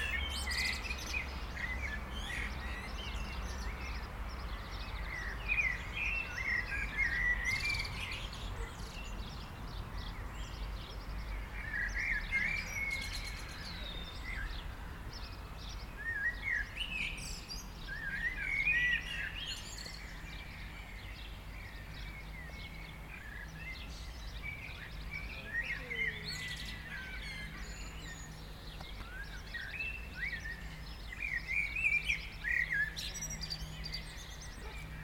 A Serrières en allant vers la via Rhôna passage de jeunes cyclistes en écoutant les merles.
8 June 2022, 16:45